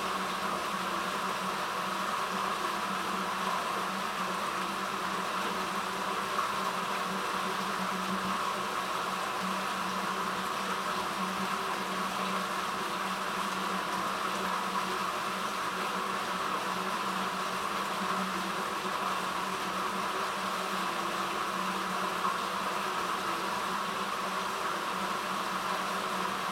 Derriaghy Riverpath Park, Dunmurry, Belfast, Lisburn, Reino Unido - Twinbrook Manhole
Small water stream running underground Twinbrook river path
Belfast, Lisburn, UK, 22 April 2014